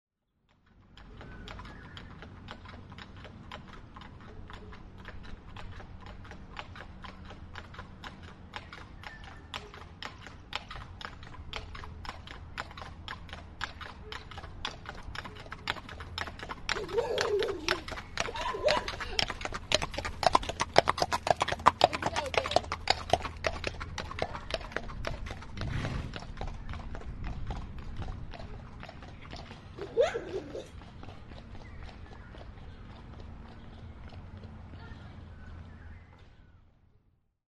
{"title": "Horses in Training, Hahndorf, South Australia - Horses in training", "date": "2008-09-09 07:27:00", "description": "These horses are being walked along the street in order to get them used to traffic noise. The intention is for the five year old Clydesdale to pull a passenger carriage along the street.\nSchoeps M/S was set up on the side of the road as the horses walked past.\nRecorded at 11:05am on Wednesday 10 September 2008", "latitude": "-35.03", "longitude": "138.81", "altitude": "333", "timezone": "Australia/South"}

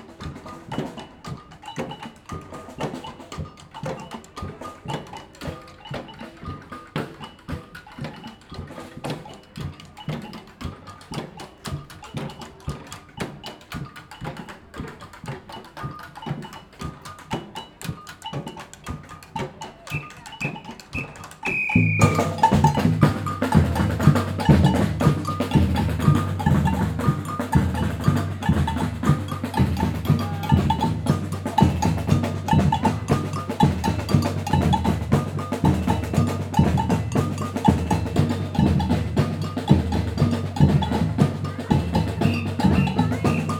{"title": "Maximilian Park, Hamm, Germany - Samba drums in the park", "date": "2020-09-06 16:00:00", "description": "Samba grooves beim Eine-Welt-und-Umwelttag mit Sambanda Girassol.\nmehr Aufnahmen und ein Interview hier:", "latitude": "51.68", "longitude": "7.88", "altitude": "66", "timezone": "Europe/Berlin"}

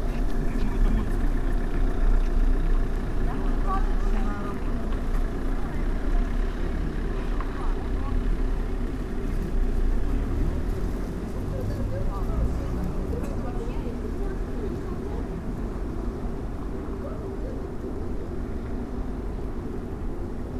{"title": "Ляховка, Минск, Беларусь - cafee Lauka", "date": "2016-08-06 16:14:00", "latitude": "53.89", "longitude": "27.57", "altitude": "199", "timezone": "Europe/Minsk"}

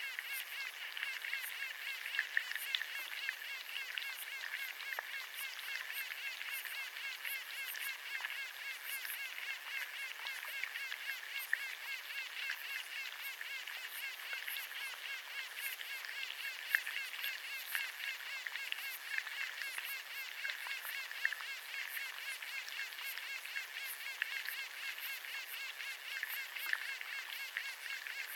{"title": "Noriūnai, Lithuania, river Levuo underwater", "date": "2019-08-04 14:30:00", "description": "hydrophones in the river. lots of bubbling from water plants and some water insects", "latitude": "55.80", "longitude": "24.88", "altitude": "74", "timezone": "Europe/Vilnius"}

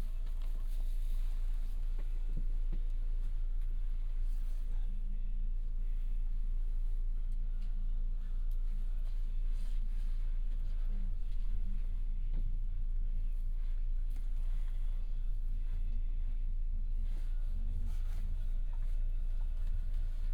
{"title": "Poznan, bus depot departure terminal - seat taken", "date": "2014-12-24 15:50:00", "description": "(binaural)waiting for the bus to depart. passengers getting in, taking their seats, taking of their coats, putting away their bags, talking in muffled voices, making phone calls. bus leaves the depot.", "latitude": "52.40", "longitude": "16.91", "altitude": "75", "timezone": "Europe/Warsaw"}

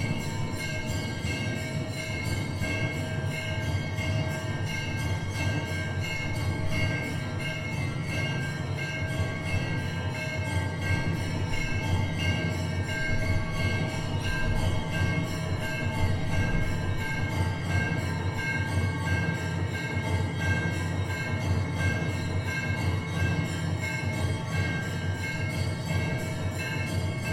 {"title": "Kisutu, Dar es Salaam, Tanzania - Shree Santan Dharma Sabha / Evening Drum Ritual", "date": "2016-10-18", "description": "In Tanzania there is a rather large population of people of Indian descent (although, as they explain, it is 'dwindling'). They built much of the city's inner neighbourhoods, in particular Kisutu which before independence (1961) used to be called 'Uhindini' –the Indian part, in Kiswahili. This recording was taken at the temple Shree Santan Dharma Sabha, which is located on Kistutu streets. After talking with some of the congregation members, and the chairman of the temple, we were allowed to stay for the evening session of drum listening –without much explanation. In the patio of the temple, there was a mechanical drum machine which started playing a repeating pattern for over 20 minutes. The bell heard was activated by a man.", "latitude": "-6.82", "longitude": "39.28", "altitude": "13", "timezone": "Africa/Dar_es_Salaam"}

